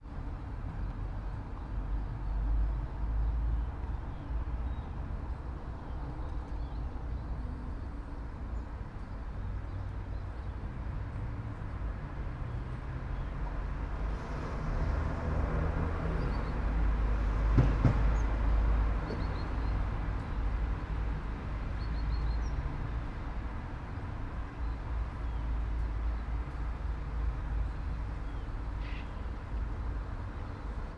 all the mornings of the ... - feb 8 2013 fri
8 February 2013, 08:37